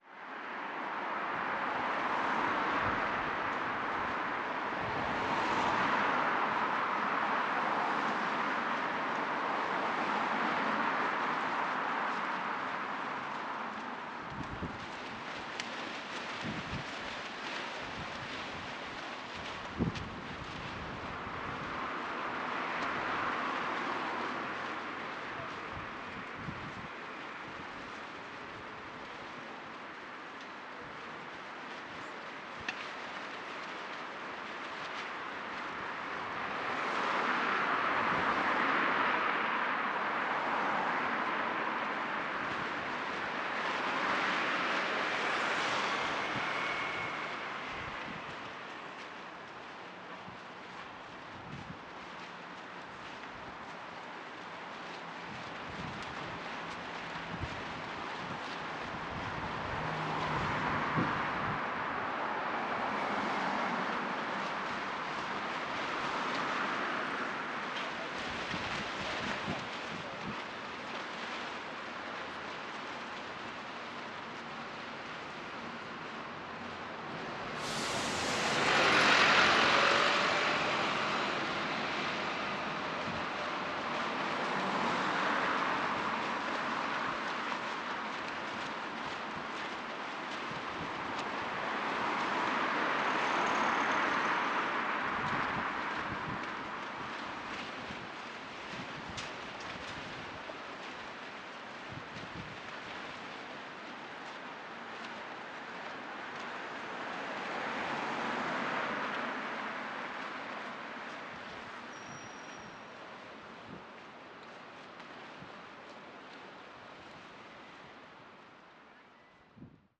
{"title": "Great Northern Mall, Belfast, UK - Great Victoria Street", "date": "2021-03-27 16:54:00", "description": "Recording of vehicles driving past with extremely windy conditions causing some of the plastic tarps from a building under construction to flap furiously.", "latitude": "54.59", "longitude": "-5.93", "altitude": "13", "timezone": "Europe/London"}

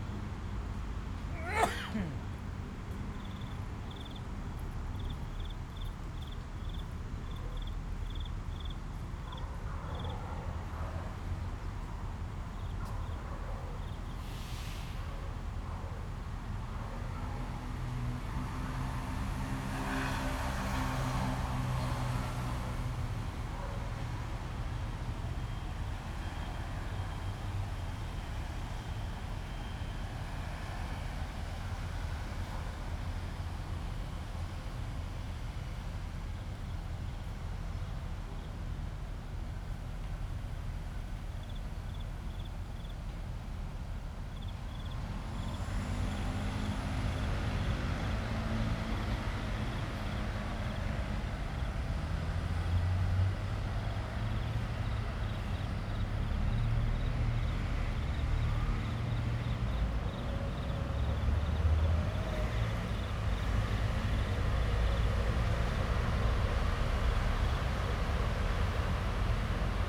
{"title": "Tamsui Line, New Taipei City - next MRT track", "date": "2016-08-25 17:34:00", "description": "Insect sounds, Traffic Sound, MRT trains through, Bicycle sound\nZoom H2n MS+XY +Spatial Audio", "latitude": "25.16", "longitude": "121.45", "altitude": "14", "timezone": "Asia/Taipei"}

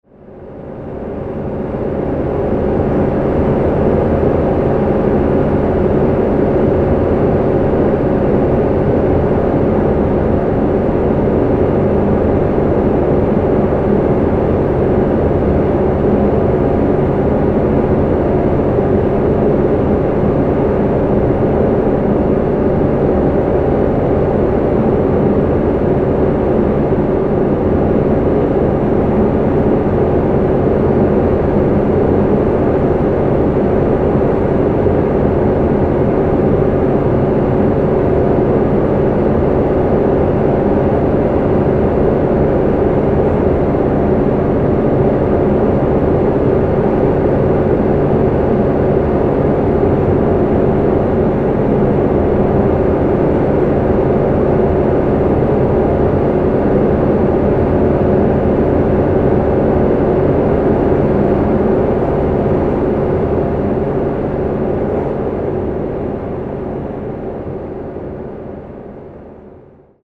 {"title": "Ouistreham, France - Bunker Cloche 1", "date": "2016-05-01 15:20:00", "description": "Through a hole of the \"bunker cloche\", Ouistreham, Normandy France, Zoom H6", "latitude": "49.28", "longitude": "-0.25", "altitude": "2", "timezone": "Europe/Paris"}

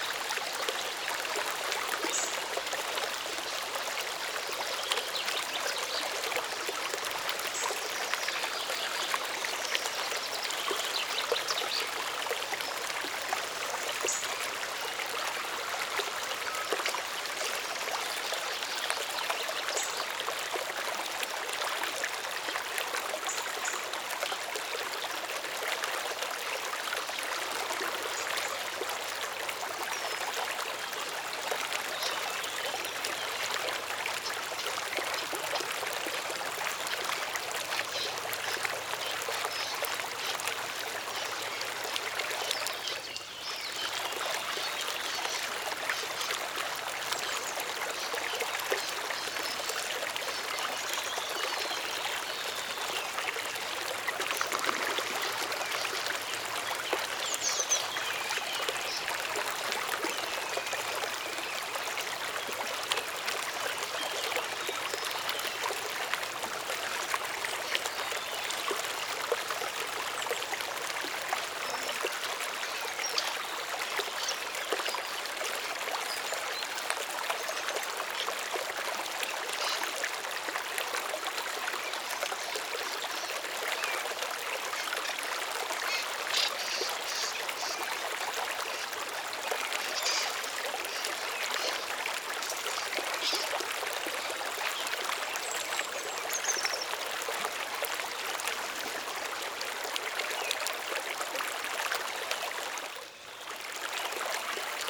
{"title": "hosingen, small stream in forest", "date": "2011-09-12 19:04:00", "description": "In the forest at a small stream in the morning time.\nThe sound of the gurgling water and the atmosphere of the dark shady forest with several bird and lush wind sounds.", "latitude": "50.02", "longitude": "6.08", "timezone": "Europe/Luxembourg"}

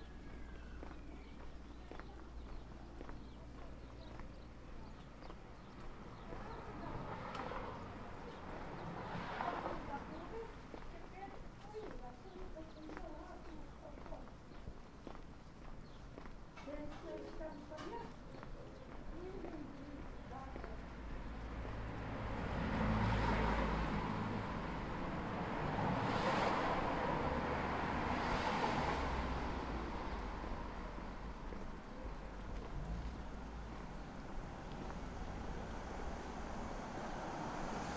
{"title": "Platania, Crete, a walk", "date": "2019-04-28 17:20:00", "description": "a walk down the narrow street to my hotel", "latitude": "35.52", "longitude": "23.92", "altitude": "12", "timezone": "Europe/Athens"}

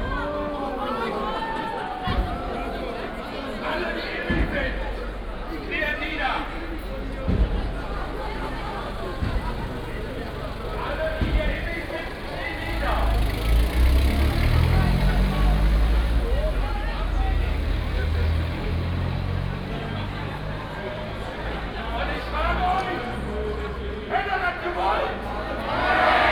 {"title": "köln, bismarckstr., karneval - nubbel burning", "date": "2012-02-22 00:20:00", "description": "burning of the nubbel, a poor scapegoat resonsible for all hidden and forbidden pleasures during carnival time.\n(tech note: olympus ls5, okm2 binaural)", "latitude": "50.94", "longitude": "6.93", "altitude": "62", "timezone": "Europe/Berlin"}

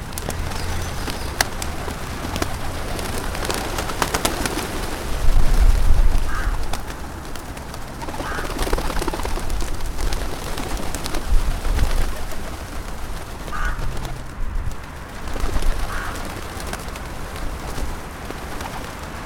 {"title": "Zabytkowa, Gorzów Wielkopolski, Polska - Pigeons", "date": "2020-02-15 11:40:00", "description": "Feeding the pigeons with the city traffic sounds in the background.", "latitude": "52.73", "longitude": "15.24", "altitude": "29", "timezone": "Europe/Warsaw"}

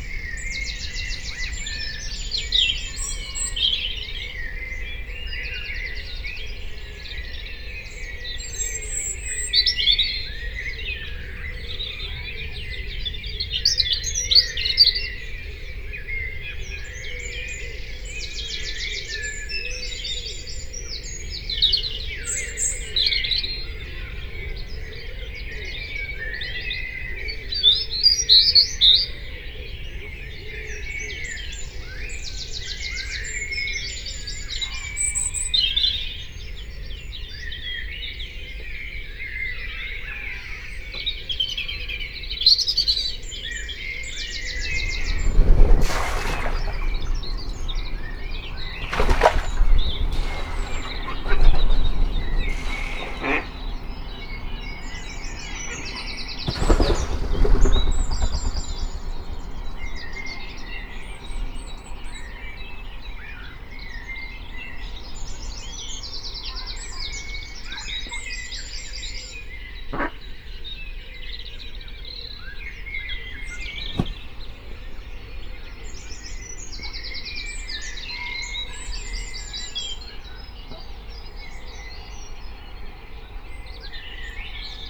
{"title": "My Home Place, Rifle Range Road + Avon Rd, Kidderminster, Worcestershire, UK - Worcestershire Morning", "date": "2021-04-18 05:14:00", "description": "Recorded in my back garden in Malvern but dedicated to this spot. This was outside the prefab I lived in as a child and where I played in the road with my pals. 70 years ago and hardly any cars meant ball games could be enjoyed and siting on the kerb with feet in the gutter was a pleasure. Once I rode down Rifle Range Road on my bike turned right into Avon Road hit the kerb and sailed clean across the footpath into our garden fence which bounced me safely to a stop.", "latitude": "52.38", "longitude": "-2.27", "altitude": "69", "timezone": "Europe/London"}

